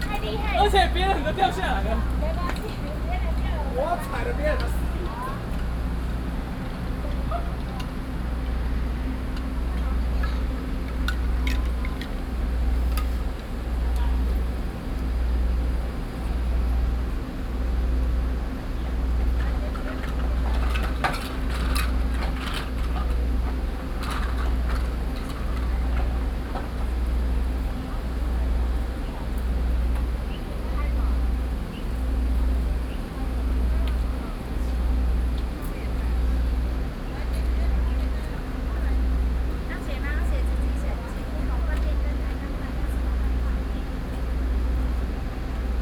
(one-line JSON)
{"title": "Jingtong St., Pingxi Dist., New Taipei City - Next to the railroad tracks", "date": "2012-06-05 16:24:00", "description": "Next to the railroad tracks, in a small alley, Visitor\nBinaural recordings, Sony PCM D50", "latitude": "25.02", "longitude": "121.72", "altitude": "250", "timezone": "Asia/Taipei"}